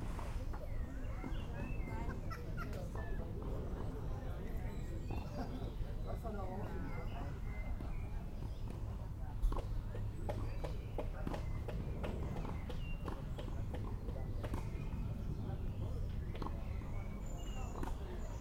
lawn-tennis - Köln, lawn-tennis
several courts at "Tennis Club Lese Grün-Weiß" Cologne, evening, may 29, 2008. - project: "hasenbrot - a private sound diary"